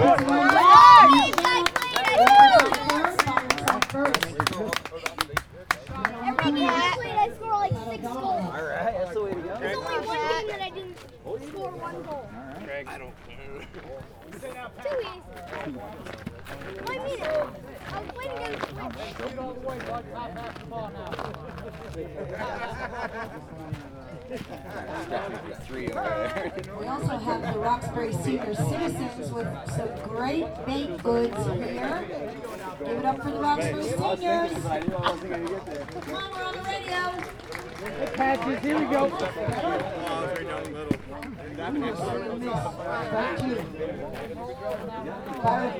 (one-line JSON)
{"title": "neoscenes: with the home team", "latitude": "42.28", "longitude": "-74.57", "altitude": "451", "timezone": "GMT+1"}